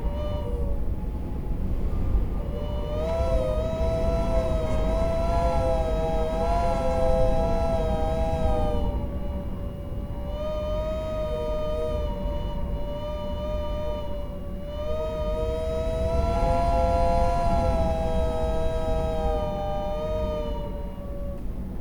Dumfries, UK - whistling window seal ...
whistling window seal ... in double glazing unit ... olympus ls14 integral mics ... farmhouse tower ...
Alba / Scotland, United Kingdom